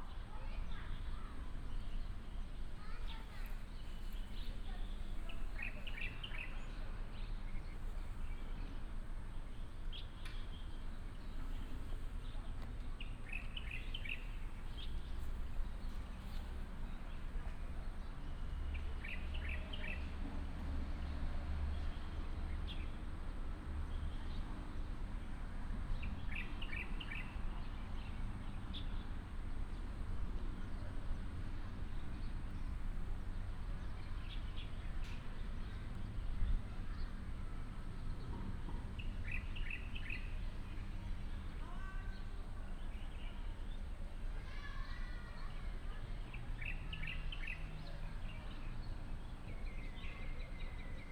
介達國小, 金峰鄉, Taitung County - Morning in school
at the school, birds sound, sound of children, Dog barking